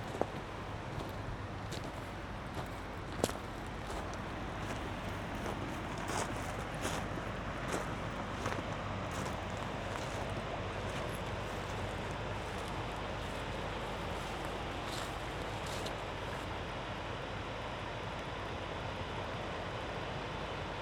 Maribor, Drava, near power station - walk along little canyon
below the street, alongside river Drava, i found a hidden narrow canyon, quite difficult to get here. the water of a little creek runs down in cascades. hum of the river power station.
(SD702, AT BP4025)